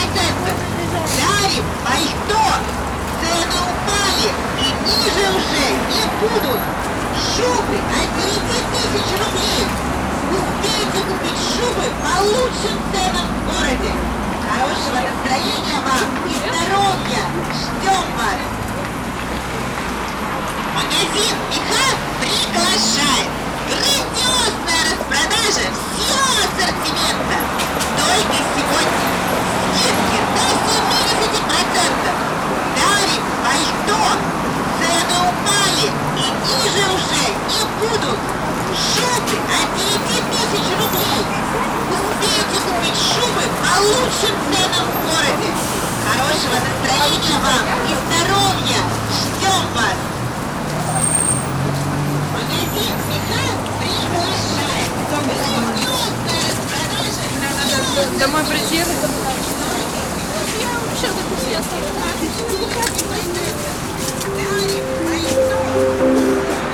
Лиговский пр., Санкт-Петербург, Россия - street noises and weird voice ads
street noises and weird voice advertisements from the nearby shops
угол Невского и Лиговского проспекта, голосовая реклама магазинов на углу